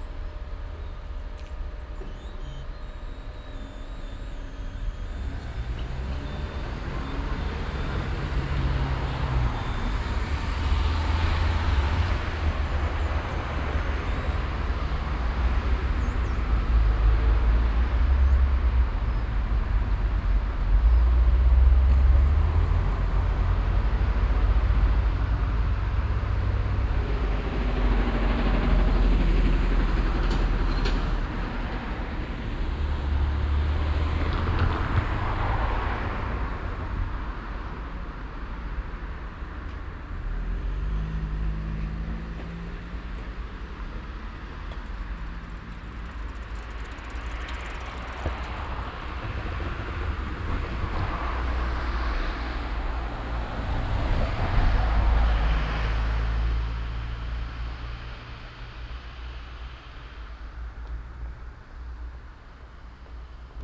refrath, in der auen, bahnübergang, schranke
morgens am bahnübergang, das herunterlassen der schranken, vorbeifahrt strassenbahn, hochgehen der schranken, anfahrt des strassenverkehrs
soundmap nrw - social ambiences - sound in public spaces - in & outdoor nearfield recordings